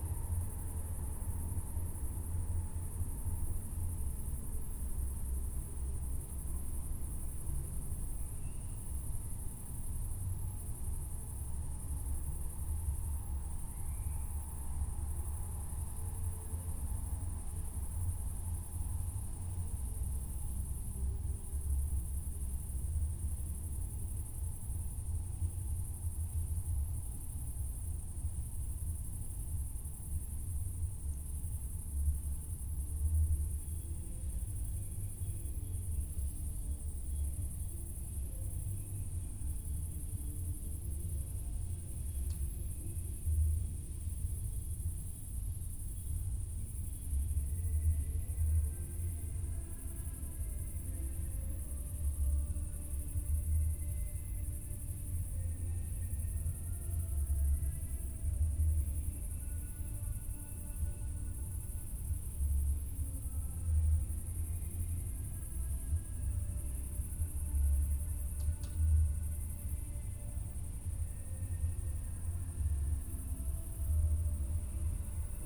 Thälmannstr., Bestensee, Deutschland - midnight ambience /w cricket and remote party
midnight ambience in a forest settlement near Bestensee, a cricket and music from a distant party
(Sony PCM D50, Primo EM172)
Brandenburg, Deutschland, 28 July 2019